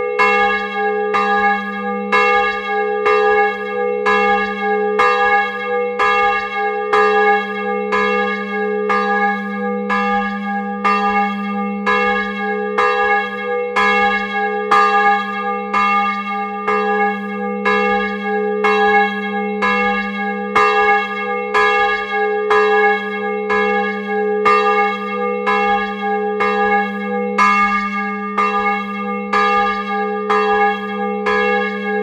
Pl. du Château, Frazé, France - Frazé - église Notre Dame
Frazé (Eure et Loir)
Église Notre Dame
Une seule cloche - Volée